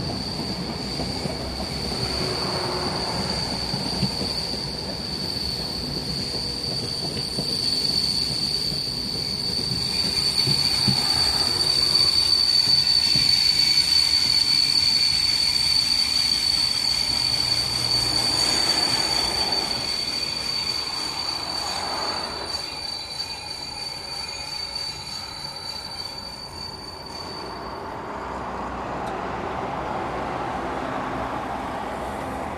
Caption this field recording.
The bridge connecting the Romanian and Bulgarian banks of the Danube is of heavy steel. The train passes across the river, tossing long shadows on the water while the sounds of its ponderous weight is ground between rails and wheels.